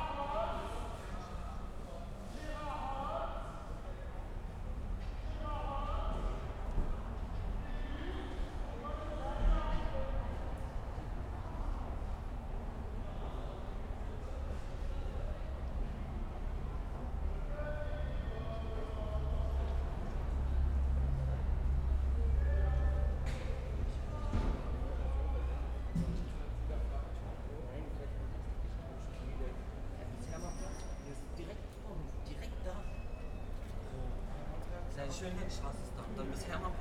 Berlin, Sanderstr. - night sounds
saturday night ambience sanderstr. neukölln berlin
Berlin, Deutschland, 6 November, 23:15